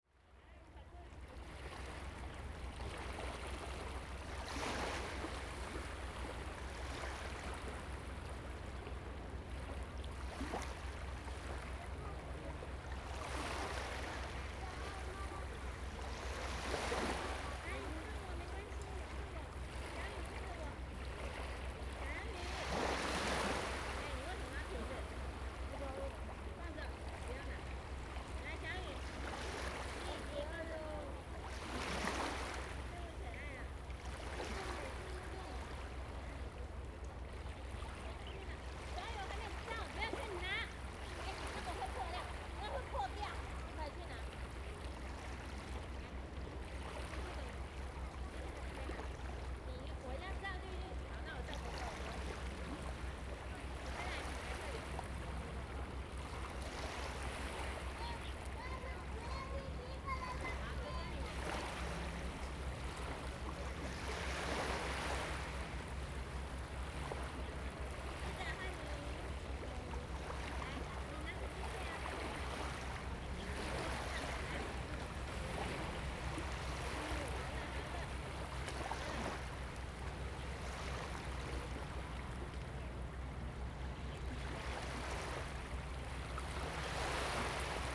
杉福漁港, Liuqiu Township - Small beach
Birds singing, Small beach, The sound of waves and tides
Zoom H2n MS +XY
Pingtung County, Taiwan